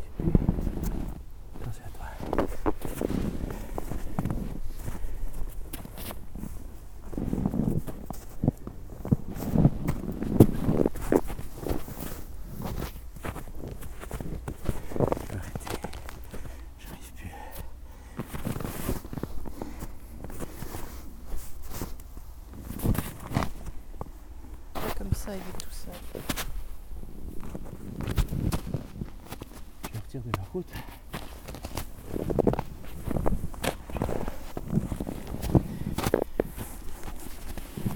Court-St.-Étienne, Belgique - Rolling a snowball
Rolling a very very big snowball in a pasture.